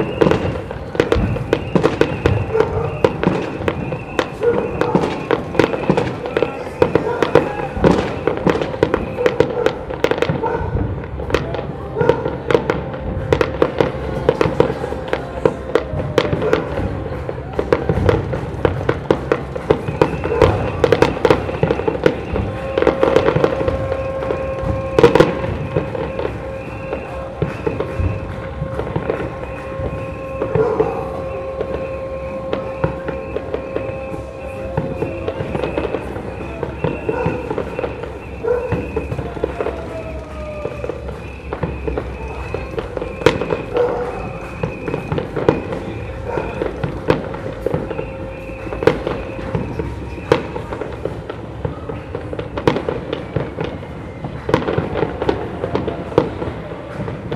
Lisbon, Portugal - Countdown to new year 2016, Lisbon
Sounds of the neighbourhood, countdown to new year 2016 and fireworks.
Lisboa, Portugal, 31 December 2015, 23:59